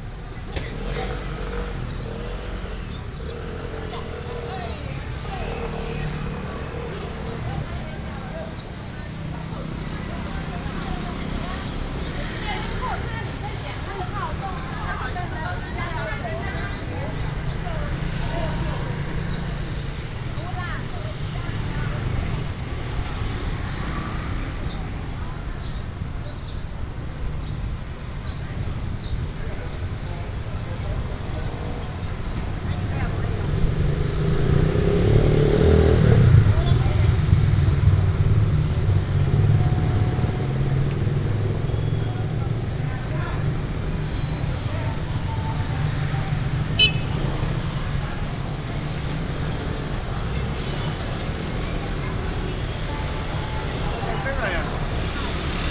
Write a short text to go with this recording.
Walking in the hamlet of the street, lunar New Year, traffic sound, Footsteps, Binaural recordings, Sony PCM D100+ Soundman OKM II